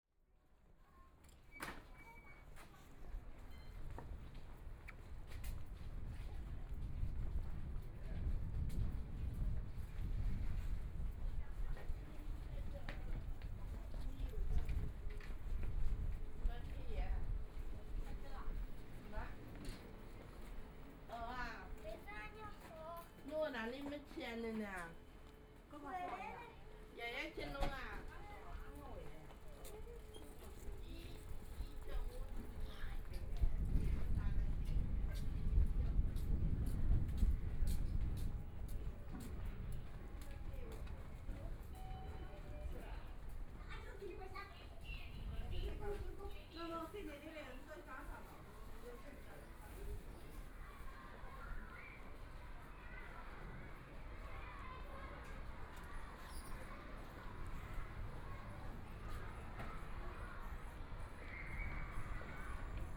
Jukui Road, Shanghai - Through the old streets
Through the old streets and communities, Walking through the Street, Traffic Sound, Walking through the market, Walking inside the old neighborhoods, Binaural recording, Zoom H6+ Soundman OKM II